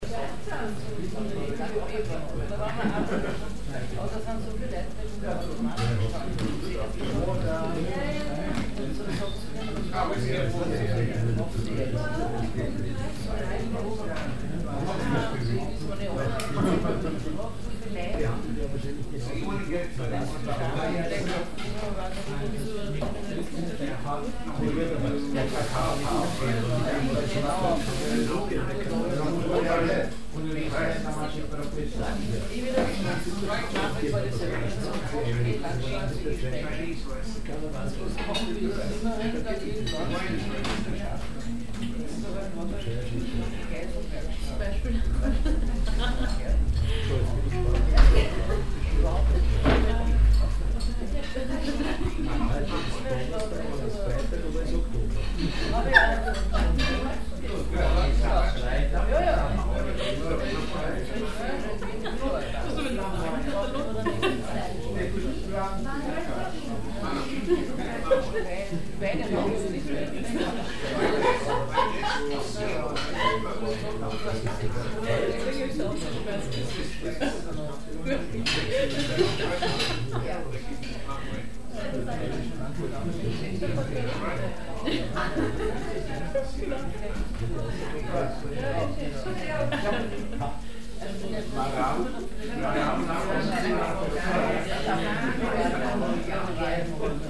vienna, schidgasse, beizn - wien, schildgasse, beizn
cityscape vienna, recorded summer 2007, nearfield stereo recordings